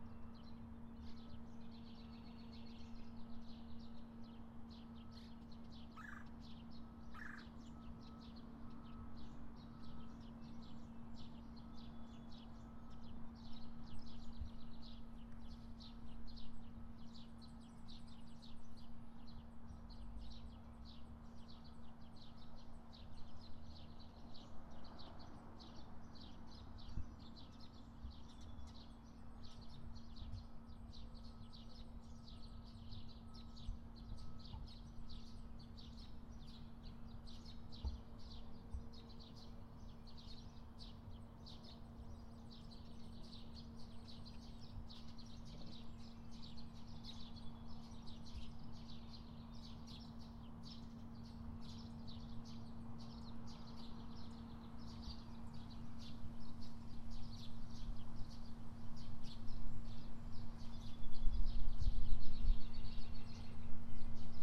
McCollister Blvd, Iowa City, IA, USA - Terry Trueblood entrance
This recording was taken at the entrance to the trail at the Terry Trueblood Recreation Area in Iowa City on a rather gloomy day. The majority of what you hear at this point on the trail will be birds as well as some traffic on the nearby road. This was recorded with a Tascam DR-100MKIII.
29 April 2019